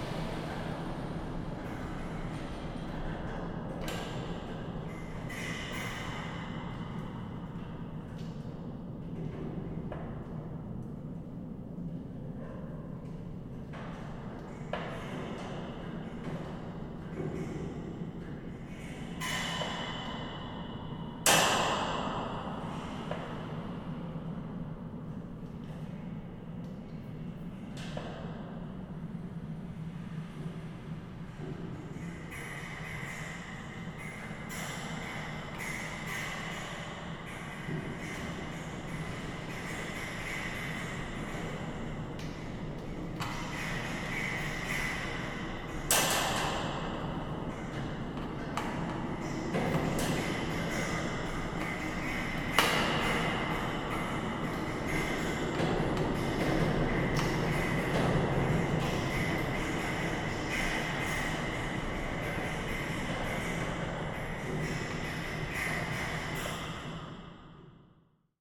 Construction fence, East End Calgary
contact mic on a construction fence in the East End district of Calgary which is facing rapid development
AB, Canada, 21 April 2010, ~05:00